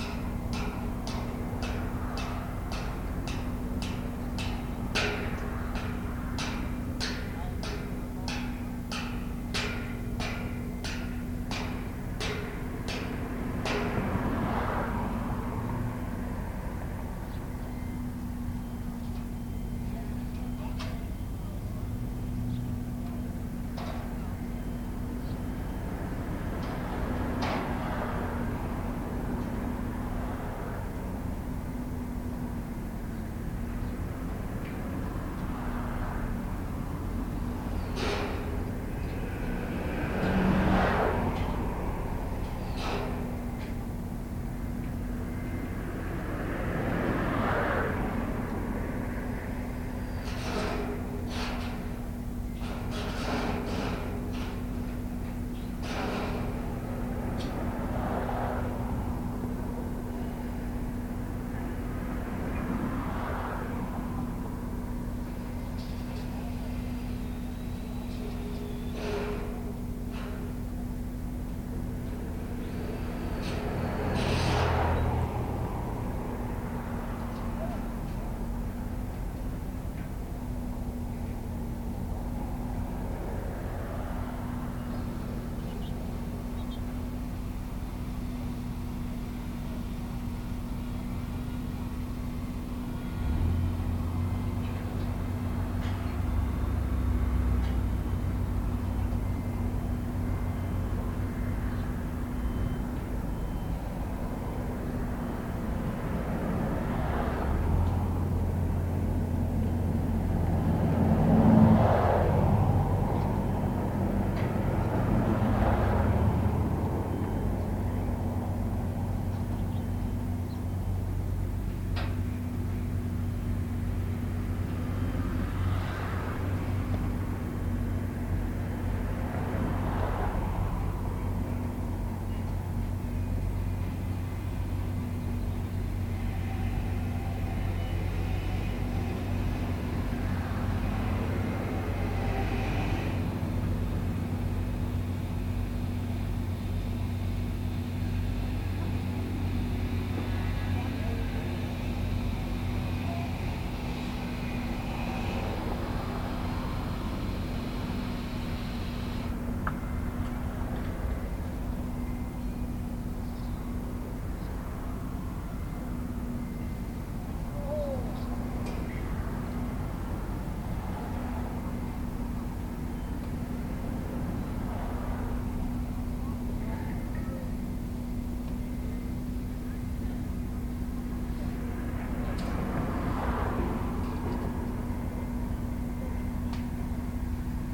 Road traffic with nearby new building construction. Recorded Zoom H4 and two Electro-Voice 635A/B Dynamic Omni-Directional mics.
NM, USA, 22 June 2018